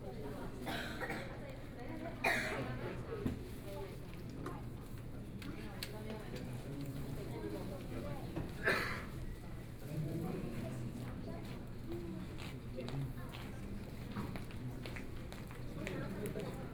National Changhua Senior High School - in the auditorium
In the school auditorium hall, Elementary school students and teachers, Zoom H4n+ Soundman OKM II, Best with Headphone( SoundMap20140105- 1 )
5 January 2014, ~14:00